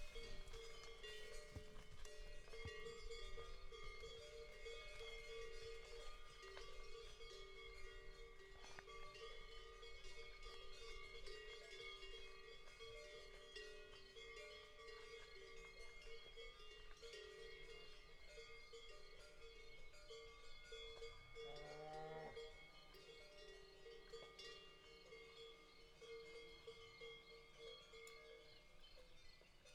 Tolmin, Slovenia - Cows with bells passing.
A shepherd chases cows to a nearby meadow. Lom Uši pro, MixPre II
Slovenija, June 2022